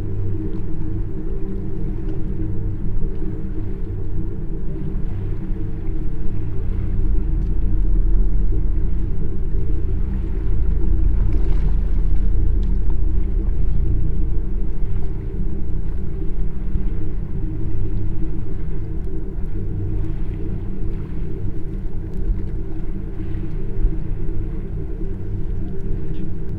Le Grand Port, Aix-les-Bains, France - Anémomètre
ZoomH4npro contre le mat qui porte l'anémomètre de mesure de la vitesse du vent sur le lac du Bourget.
France métropolitaine, France, 25 August 2022